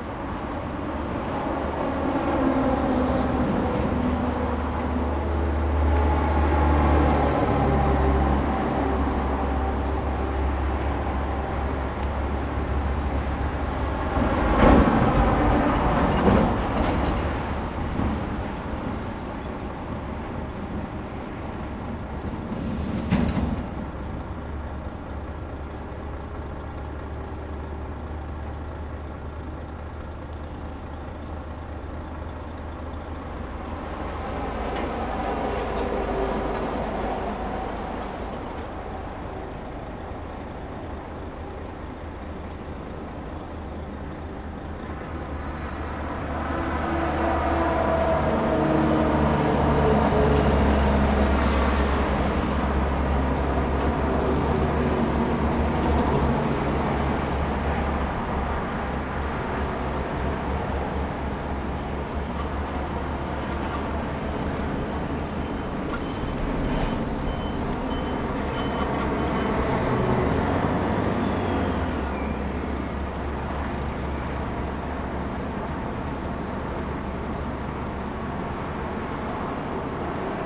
highway, Traffic sound
Zoom H2n MS+XY
Linkou District, 西部濱海公路6000號